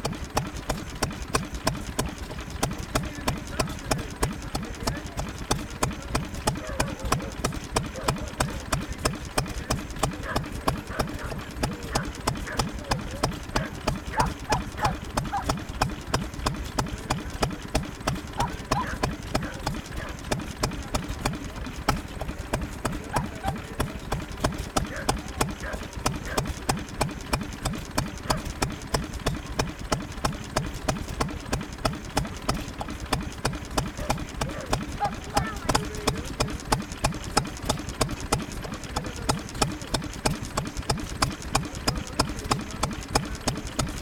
Burniston, UK - Stationary Engine ... Burniston and District Fair ...
Bamford Stationary Engine ... built in the 1940s ..? used to power pumps ... generators ... farm machinery ... open lavalier mics clipped to baseball cap ... warm sunny afternoon ...
29 August